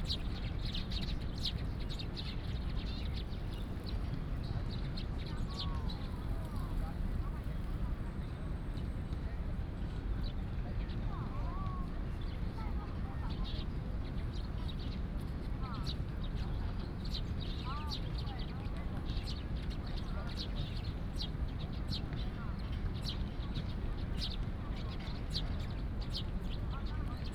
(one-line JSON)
{"title": "台大醉月湖, Da’an Dist., Taipei City - Many sparrows", "date": "2016-03-04 16:15:00", "description": "At the university, Bird sounds, Goose calls, Many sparrows", "latitude": "25.02", "longitude": "121.54", "altitude": "12", "timezone": "Asia/Taipei"}